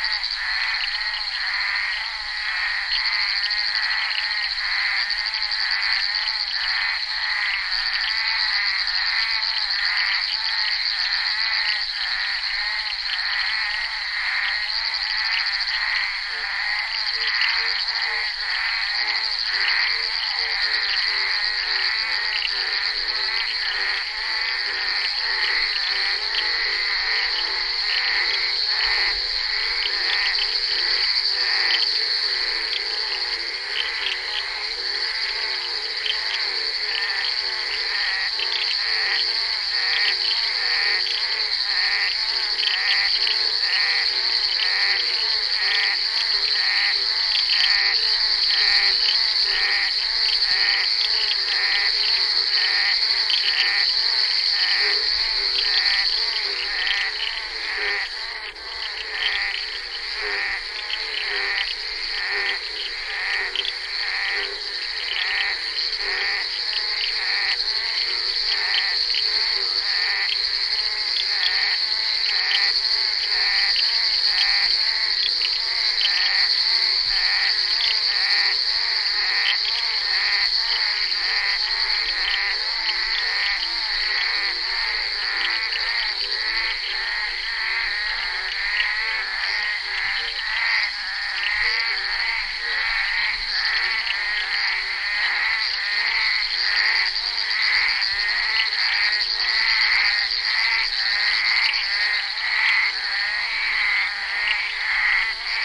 crapaux buffle
enregistré sur le tournage Bal poussière dhenri duparc en février 1988